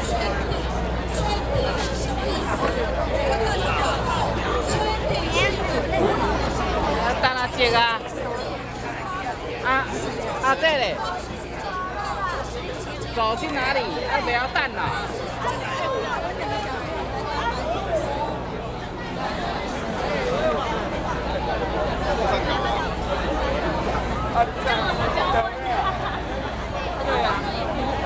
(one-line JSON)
{"title": "Ketagalan Boulevard, Taipei - Farmers' protests", "date": "2011-07-16 21:30:00", "description": "Farmers' protests, Sony ECM-MS907, Sony Hi-MD MZ-RH1+ Zoom H4n", "latitude": "25.04", "longitude": "121.52", "altitude": "8", "timezone": "Asia/Taipei"}